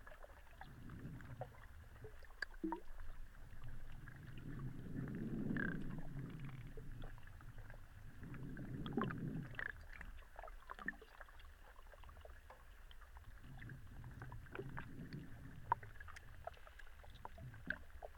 Ventspils, Latvia, hydrophone near promenade
Underwater listening in some kind of basin near promenade